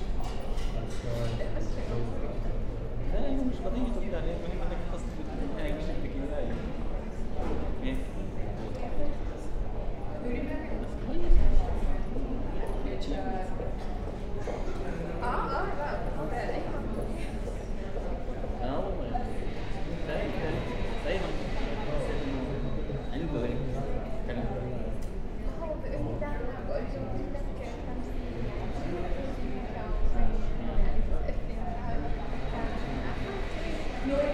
A short 10 minute meditation in the study area on the mezzanine floor above the cafe at the Headington campus of Oxford Brookes University. (Sennheiser 8020s either side of a Jecklin Disk to a SD MixPre6)
Oxford, UK, 14 September, 11:30